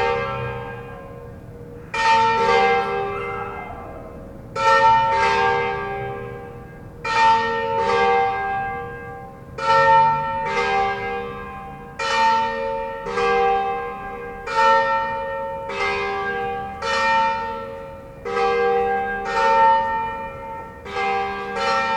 {
  "title": "Via Bossi, Pavia, Italy - Bells and barking dog",
  "date": "2012-10-23 17:15:00",
  "description": "when the evening falls, every day, the dog follows with its barking the church bells.",
  "latitude": "45.18",
  "longitude": "9.16",
  "altitude": "77",
  "timezone": "Europe/Rome"
}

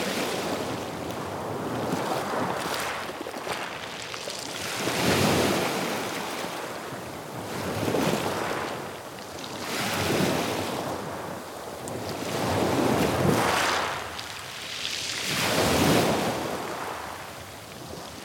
{
  "title": "La Rochelle, France - Galets de lHoumeau",
  "date": "2015-06-06 19:30:00",
  "description": "Gros plan Plage de Galets L'Houmeau\nSac & ressac\ncouple ORTF DPA 4022 + Rycotte + AETA",
  "latitude": "46.19",
  "longitude": "-1.20",
  "altitude": "5",
  "timezone": "Europe/Paris"
}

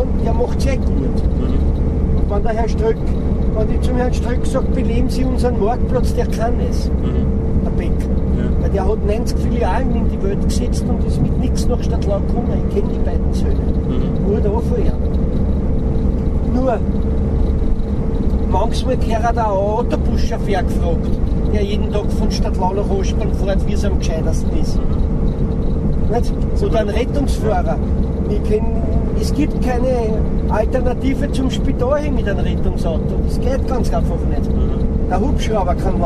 {
  "title": "A4 motorway, from bratislava to vienna",
  "date": "2010-04-02 18:35:00",
  "description": "going with a truck driver from bratislava to vienna, talking about the gradual decay of the viennese suburban neighbourhood of stadlau, where he is native",
  "latitude": "48.10",
  "longitude": "16.65",
  "altitude": "180",
  "timezone": "Europe/Vienna"
}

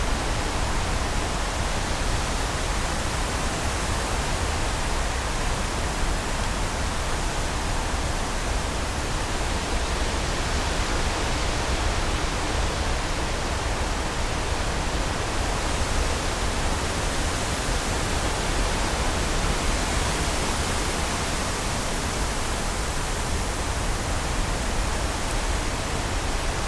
{
  "title": "Sälsten 871 33 Härnösand, Sverige - In the wood very windy",
  "date": "2020-09-18 14:35:00",
  "description": "Recorded on a windy day in the forest at Sälsten, Härnösand. The recording was made with two omnidirectional microphones",
  "latitude": "62.64",
  "longitude": "17.98",
  "timezone": "Europe/Stockholm"
}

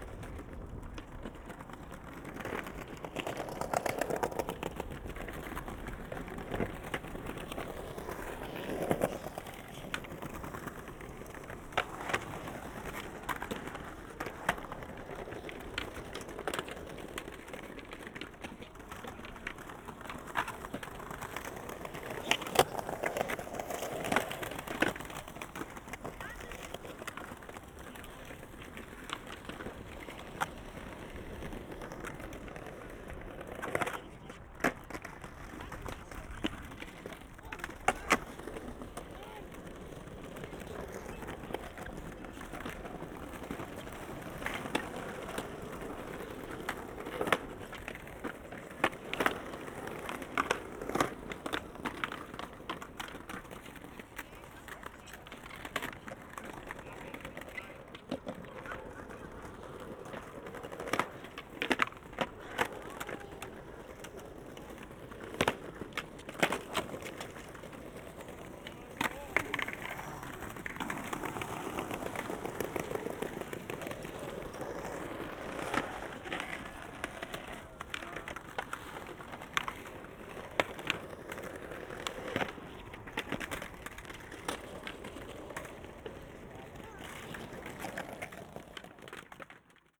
{
  "title": "Tempelhofer Feld, Berlin - skater area",
  "date": "2021-02-19 16:05:00",
  "description": "skaters practising on former Berlin Tempelhof airport, at a designated area which seems to be quite popular\n(Sennheiser Ambeo headset / ifon SE)",
  "latitude": "52.47",
  "longitude": "13.41",
  "altitude": "46",
  "timezone": "Europe/Berlin"
}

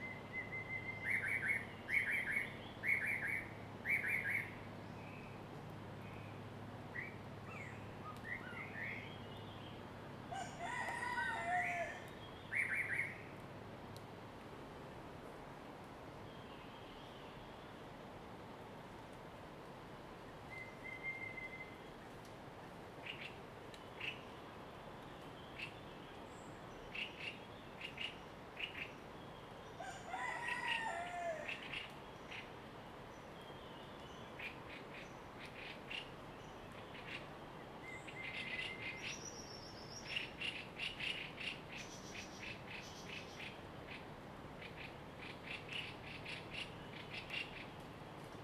{
  "title": "水上巷, 埔里鎮桃米里 Taiwan - In the morning",
  "date": "2016-03-26 05:55:00",
  "description": "Morning in the mountains, Bird sounds, Traffic Sound, Crowing sounds, Dogs barking\nZoom H2n MS+XY",
  "latitude": "23.94",
  "longitude": "120.92",
  "altitude": "494",
  "timezone": "Asia/Taipei"
}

